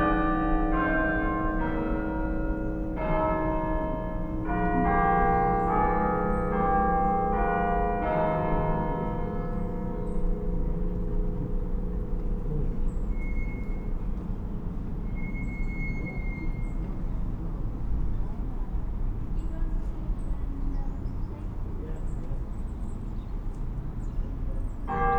Bells in The Walled Garden, Ledbury, Herefordshire, UK - In the Walled Garden
MixPre 6 II with 2 Sennheiser MKH 8020s. The microphones are in a 180 degree configuration and placed on the floor to maximise reflected sound capture.